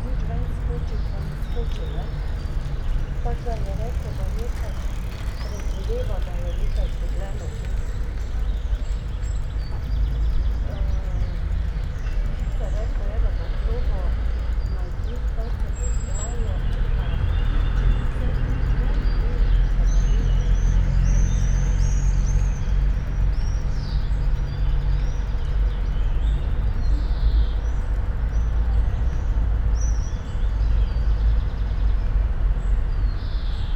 all the mornings of the ... - may 29 2013 wed